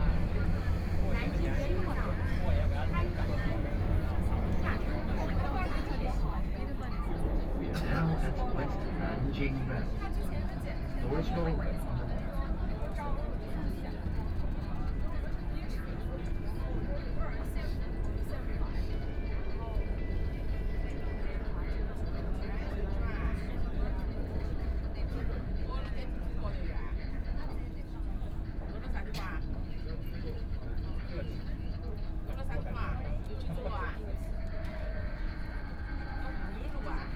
Jing'an District, Shanghai - Line 2(Shanghai Metro)
from Jing'an Temple Station to People's Square Station, Binaural recording, Zoom H6+ Soundman OKM II
23 November, 16:21